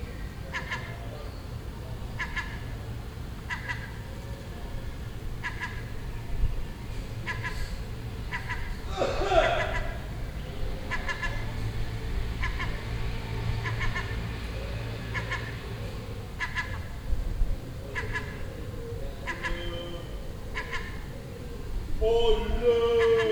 Birds, thunder and bells The Hague. - Seagull Chatter
This seagull had a nest on the roof next door and it was making these sounds all day for a week.
Binaural recording.